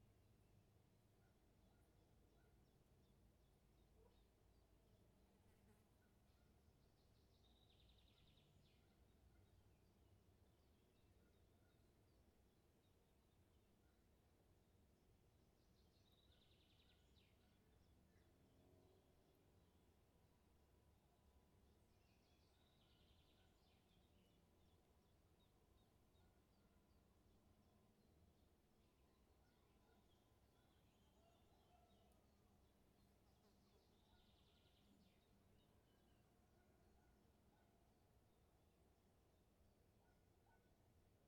вулиця Зарічна, Вінниця, Вінницька область, Україна - Alley12,7sound1nature
Ukraine / Vinnytsia / project Alley 12,7 / sound #1 / nature